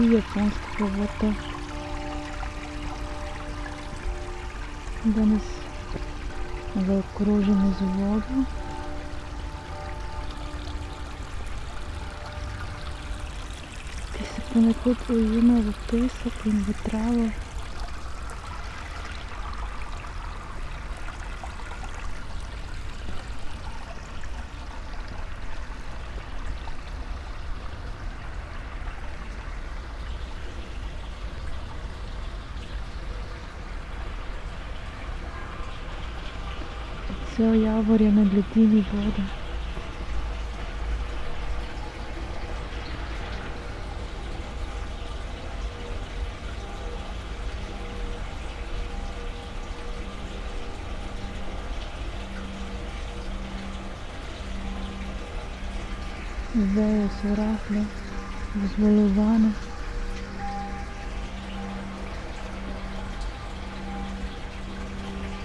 sonopoetic path, Maribor, Slovenia - walking poem
round walk, water flow, bells, spoken words ...